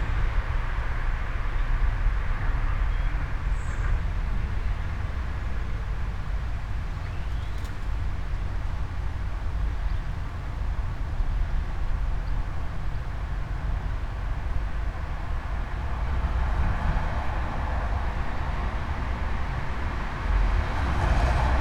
all the mornings of the ... - jul 26 2013 friday 05:34
Maribor, Slovenia, 26 July 2013